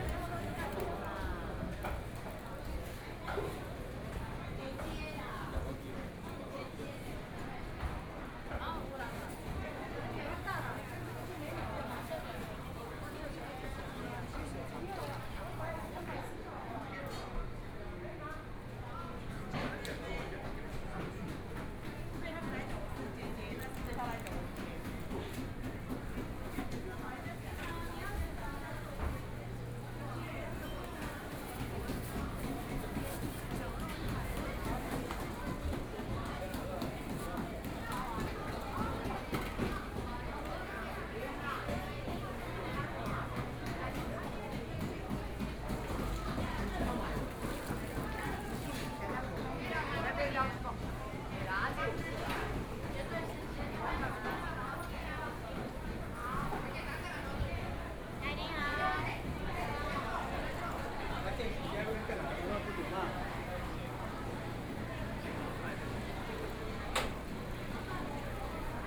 Taitung City's Central Market - in the market
Walking through the market inside, Traffic Sound, Dialogue between the vegetable vendors and guests, Binaural recordings, Zoom H4n+ Soundman OKM II ( SoundMap2014016 -3)